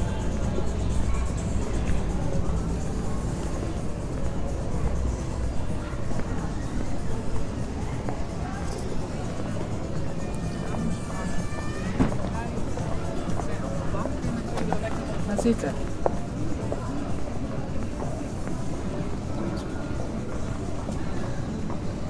Stadhuisplein, Zoetermeer
Music of café at the Stadhuisplein during sound walk